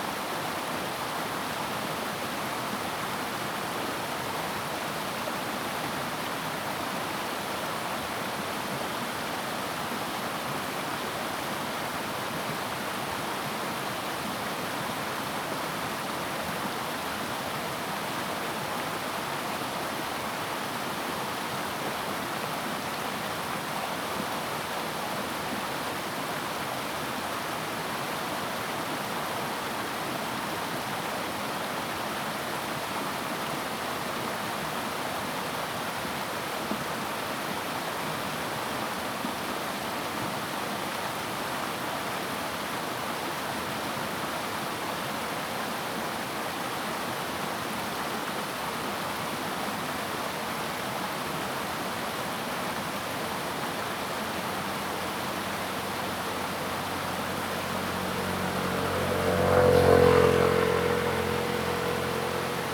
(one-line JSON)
{"title": "種瓜坑溪, 種瓜路桃米里, Puli Township - Stream sound", "date": "2016-04-21 11:39:00", "description": "Faced with streams\nZoom H2n MS+ XY", "latitude": "23.95", "longitude": "120.91", "altitude": "576", "timezone": "Asia/Taipei"}